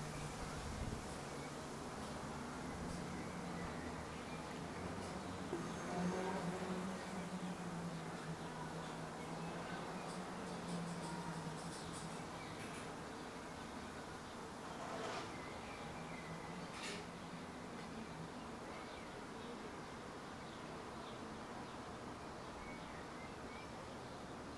Wiesenstraße, Berlin, Deutschland - berlin city idyll
The place is located in the middle of the lively district Gesundbrunnen/Wedding and expresses itself through a mix of urban sounds like cars and talking people but also through a touch of nature with bird sounds and rustling trees. Sometimes it feels like you left the city already...